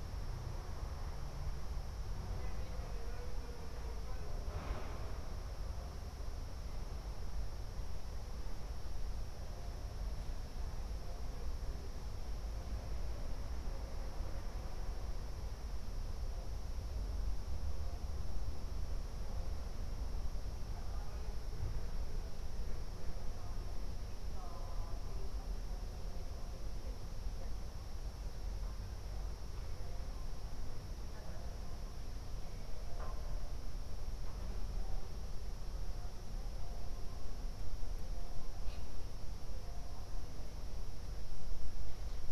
Ascolto il tuo cuore, città, I listen to your heart, city. Several chapters **SCROLL DOWN FOR ALL RECORDINGS** - Terrace August 10th afternoon in the time of COVID19 Soundscape
"Terrace August 10th afternoon in the time of COVID19" Soundscape
Chapter CXXIV of Ascolto il tuo cuore, città. I listen to your heart, city
Monday, August 10th, 2020. Fixed position on an internal terrace at San Salvario district Turin five months after the first soundwalk (March 10th) during the night of closure by the law of all the public places due to the epidemic of COVID19.
Start at 2:41 p.m. end at 3:12 p.m. duration of recording 30'49''